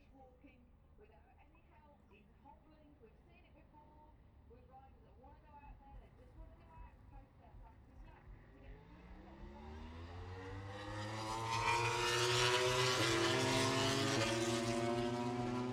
british motorcycle grand prix 2022 ... moto grand prix qualifying two ... outside of copse ... dpa 4060s clipped to bag to zoom h5 ...
England, United Kingdom, 6 August, ~15:00